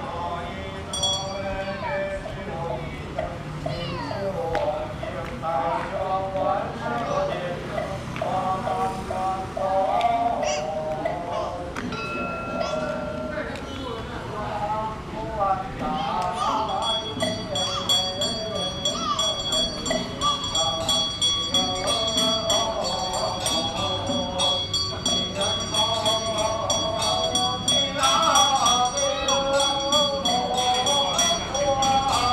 Temple Ceremonies
Rode NT4+Zoom H4n